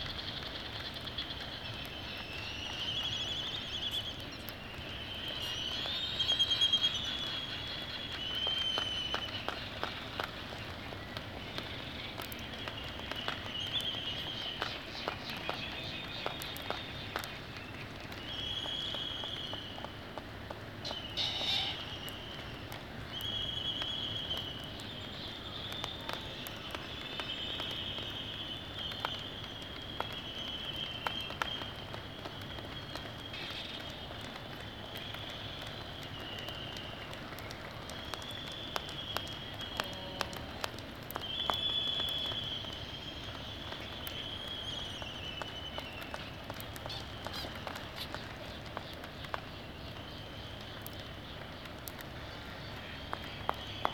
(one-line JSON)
{"title": "United States Minor Outlying Islands - laysan albatross soundscape ...", "date": "1997-12-19 05:00:00", "description": "Charlie Barracks ... Sand Island ... Midway Atoll ... dark and drizzling ... mic is 3m from male on nest ... the birds whinny ... sky moo ... groan ... clapper their bills ... sounds from white terns and black-footed albatross ... bonin petrels ... Sony ECM 959 one point stereo mic to Sony minidisk ...", "latitude": "28.22", "longitude": "-177.38", "altitude": "14", "timezone": "Pacific/Midway"}